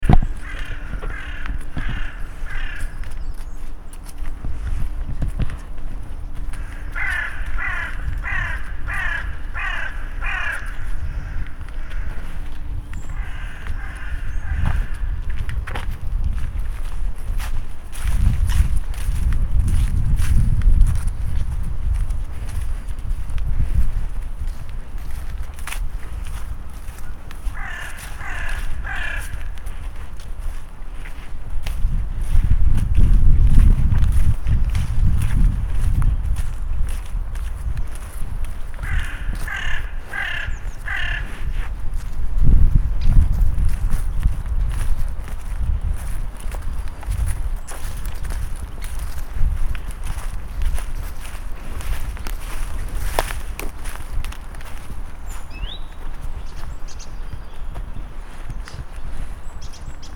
Humlebæk, Danmark - Liv
Krogerup Højskole emmer af liv, også uden for skolen, hvor der er et rigt fugleliv. Denne optagelse er af en flot forårs gåtur på skolens grønne områder.